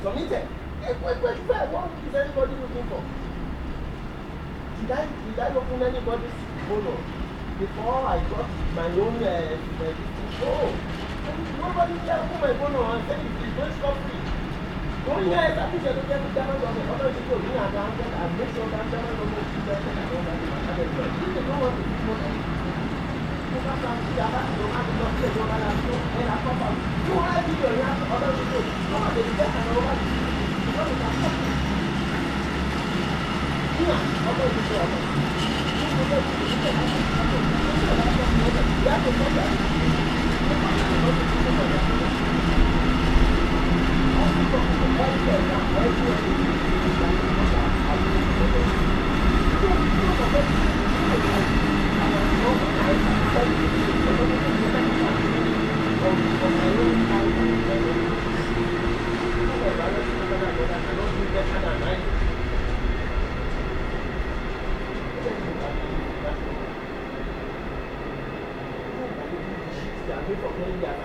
Gremberghoven, Köln, Deutschland - Morgens / Morning
Köln Steinstraße S-Bahnhaltestelle - Mann spricht mit Telefon - Güterzug startet - Vögel /
Cologne Steinstraße littel Station - Man talking with cellular phone - freight train starts - Birds
3 July, 06:10